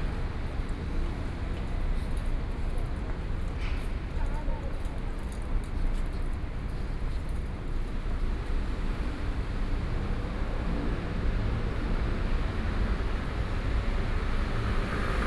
Neihu District - Traffic noise
Corner at the intersection, Sony PCM D50 + Soundman OKM II